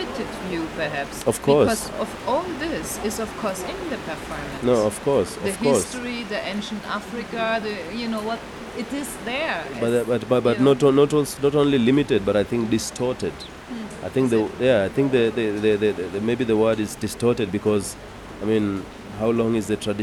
GoDown Art Centre, South B, Nairobi, Kenya - What culture are we talking about...?
… I had been starting the recording somewhere in the middle of talking to Jimmy, so here Jimmy describes Ato’s performance in more detail…